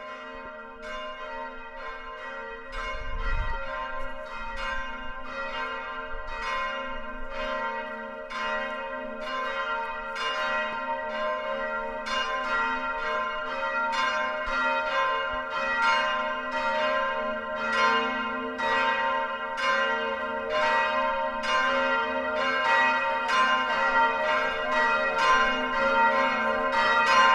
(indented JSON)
{
  "title": "Sv. Salvator church - Sv. Salvator",
  "date": "2015-06-14 10:30:00",
  "description": "Bells ringing at the end of Sunday morning Mass",
  "latitude": "50.09",
  "longitude": "14.42",
  "altitude": "204",
  "timezone": "Europe/Prague"
}